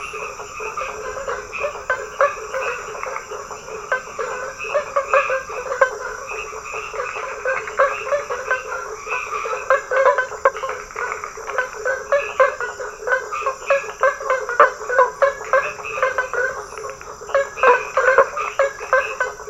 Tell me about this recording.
Behind the church, in the small village of Praia do Sono, toads are singing. Beach in background very far away... Recorded by a MS Setup Schoeps CCM41+CCM8 in a Zephyx Windscreen by Cinela, Recorder Sound Devices 633, Sound Reference: BRA170219T10